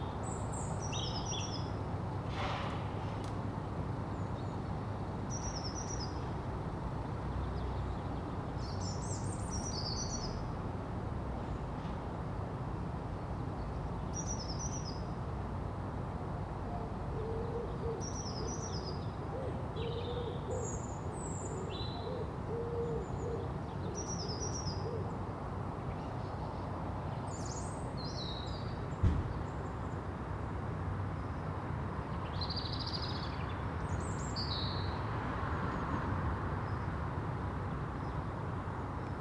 Contención Island Day 22 inner northwest - Walking to the sounds of Contención Island Day 22 Tuesday January 26th
The Poplars High Street Causey Street Linden Road
Cars bikes
and groups of schoolchildren pass
Rooftop woodpigeons chase
nod/bow
and tip in early courtship moves
Stained glass porch windows
soft blues and greys
A fan of dead ivy still clings
above the door of a front wall